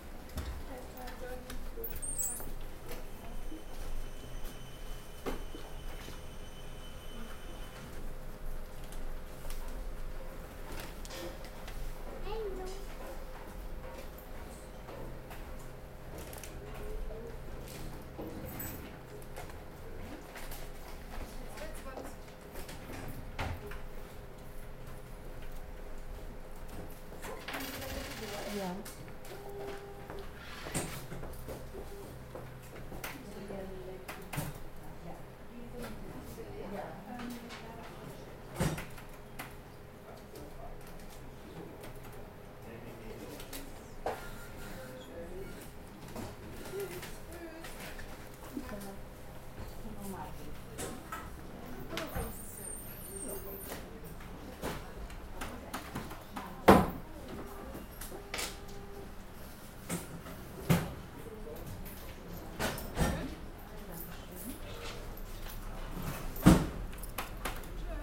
post office, kartaeuserwall, cologne
recorded june 4, 2008 - project: "hasenbrot - a private sound diary"